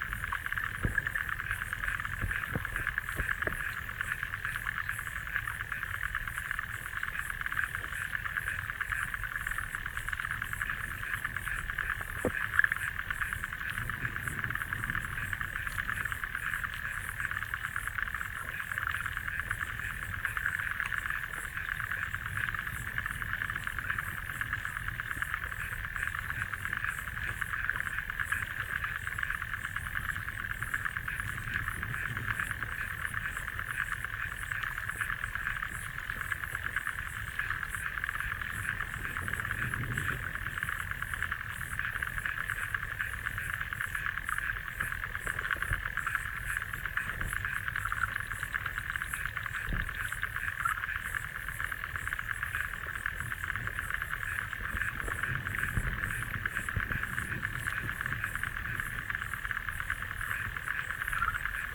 lake Politiskiai, Lithuania, bridge underwater
hydrophone right under small bridge
Utenos apskritis, Lietuva, 13 June 2020